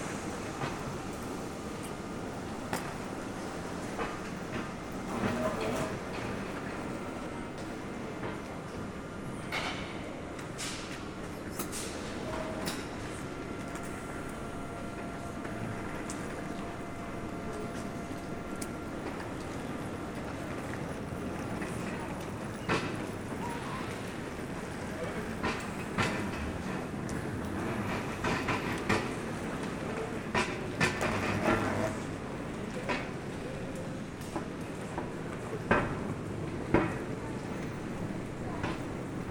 Paris, France - Austerlitz station
A long ride into the Paris metro and the Austerlitz station.
Entrance of the metro, travel into the metro, going out during long tunnels, announcements of the Austerlitz station, some trains arriving, lot of people going out with suitcases.